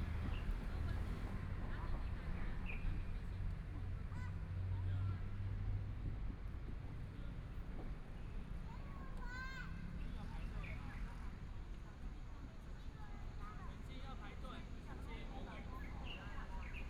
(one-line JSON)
{"title": "永直公園, Taipei City - Sitting in the park", "date": "2014-02-25 17:43:00", "description": "Sitting in the park, Traffic Sound, Elderly voice chat, Birds singing, Children's play area\nBinaural recordings\nZoom H4n+ Soundman OKM II", "latitude": "25.08", "longitude": "121.55", "timezone": "Asia/Taipei"}